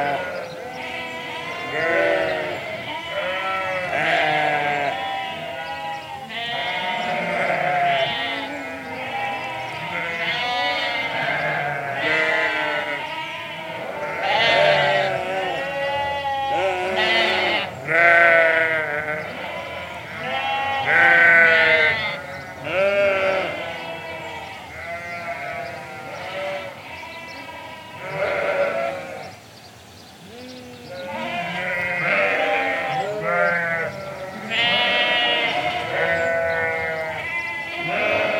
The post-shearing racket, Greystoke, Cumbria, UK - Sheep all baaing after being sheared
The sheep were all baaing like mad because they had just been shorn. The clipping was all happening in a barn where we couldn't see, but the freshly shorn sheep were all in a tizzy in the main yard, bleating and looking for their friends in the chaos. Shearing the sheep involves gathering them all up then shearing them one by one, then they have to go and find their buddies afterwards, which is made harder because everyone looks different after their haircut. So they are all going crazy in this recording and the noise of the sheep is setting the sparrows off. A noisy day on the farm. It was also a bit windy so I propped the EDIROL R-09 between some rocks in a dry stone wall. The recording has a bit of a strange acoustic because of this, but without the shelter, it would have been pretty difficult to record the amazing sounds.